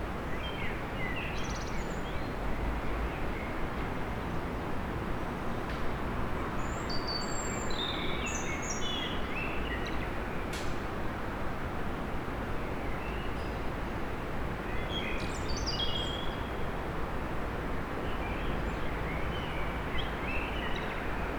Innstraße, Innsbruck, Österreich - Morgenstimmung im Waltherparkpark
vogelweide, waltherpark, st. Nikolaus, mariahilf, innsbruck, stadtpotentiale 2017, bird lab, mapping waltherpark realities, kulturverein vogelweide, vogelgezwitscher, autos fahren vorbei
Innsbruck, Austria, March 2017